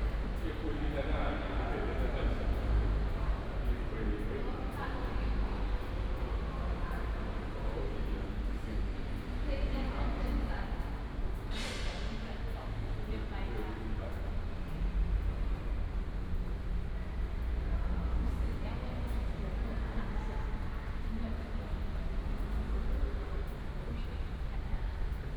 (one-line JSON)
{"title": "Sec., Dunhua S. Rd., Da’an Dist., Taipei City - Walking in the underpass", "date": "2015-07-28 17:18:00", "description": "Walking in the underpass", "latitude": "25.02", "longitude": "121.55", "altitude": "14", "timezone": "Asia/Taipei"}